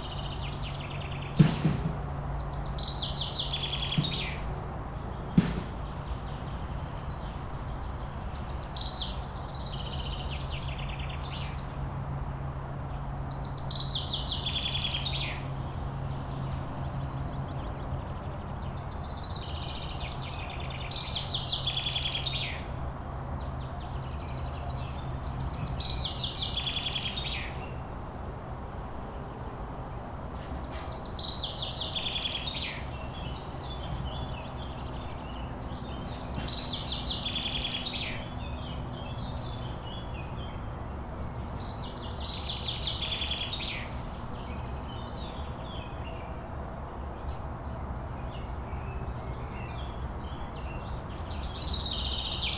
wermelskirchen, hünger: friedhof - the city, the country & me: cemetery
singing birds, a gardener and in the background the sound of the motorway a1
the city, the country & me: may 6, 2011